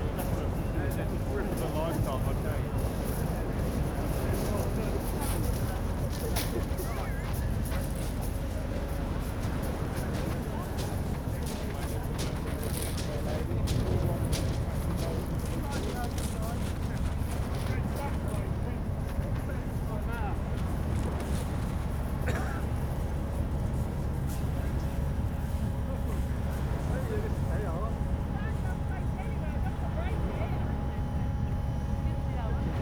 Refugees picked-up by the Dungeness Lifeboat come ashore, WXGH+QR Romney Marsh, UK - Refugees picked-up by the Dungeness Lifeboat come ashore
Increasing numbers of refugees are being trafficked across the Chanel from France during 2021. Their boats are often inadequate and dangerous and they are picked-up at sea by UK lifeboats. This is recording of the end of one such rescue. At around 1min40 the life boat engine is heard speeding towards the shore to rocket out of the water onto the shingle bank with an intense hiss of stones. It is brief and spectacular. From there it is hauled up by machines. The refugees, including several children, are met by police and immigration officials and walk up the beach to the lifeboat station. A pregnant woman is carried on a stretcher.